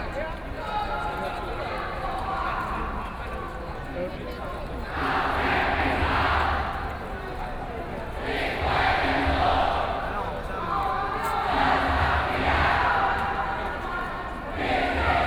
Nonviolent occupation, To protest the government's dereliction of duty and destructionㄝZoom H4n+ Soundman OKM II